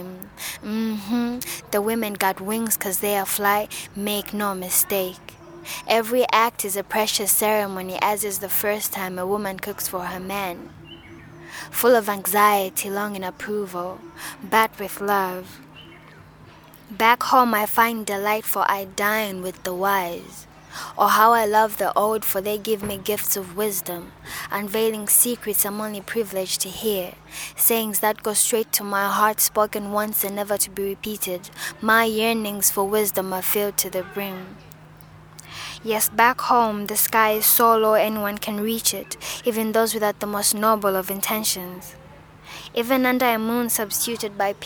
Showgrounds, Lusaka, Zambia - Yvonne Sishuwa aka Winter celebrates her ancestry place, her country, and her grandmother…
We were making these recordings while sitting in a beautiful public garden ay Showgrounds; you’ll hear the birds and the sound of a pond in the background.
Yvonne is a student at UNZA and poet member of Bittersweet poetry Zambia.